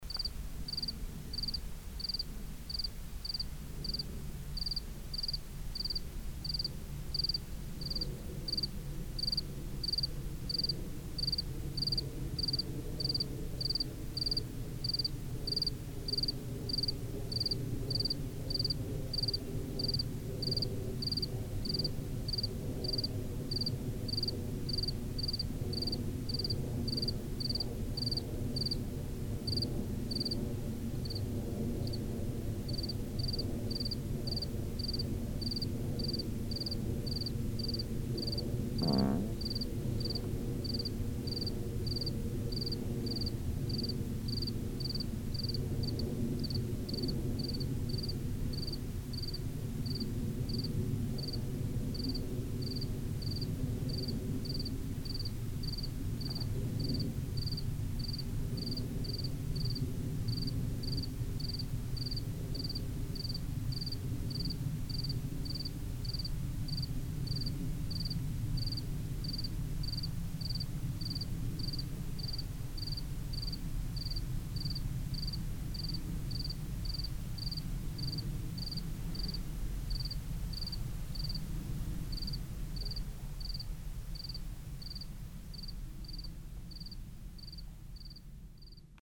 wengen, cricket at night
in the night, close to a field, a cricket chirping and a plane crossing the sky.
soundmap d - social ambiences and topographic field recordings